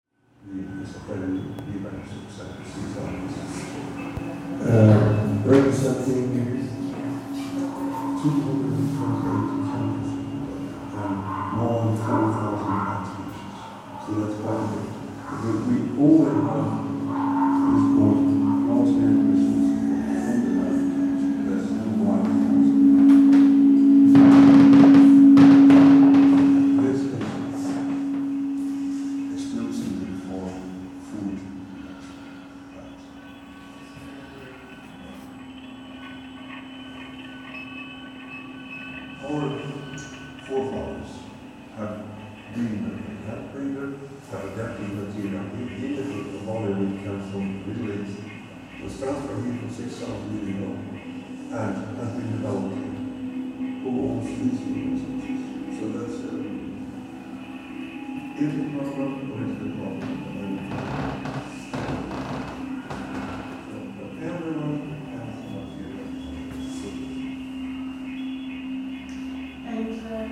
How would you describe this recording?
Sounds from audio and video installations from the Cold Coast Archive project, featuring the Svalbard global Seed Vault (with background Center ambience).